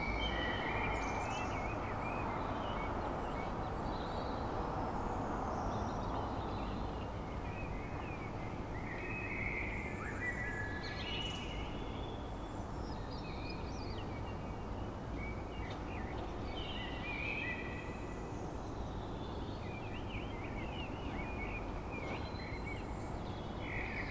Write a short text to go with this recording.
for some reason, blackbirds started to sing deep at a cold and foggy night. After a minute, a ventilation from an opposite basement started to hum. (Sony PCM D50)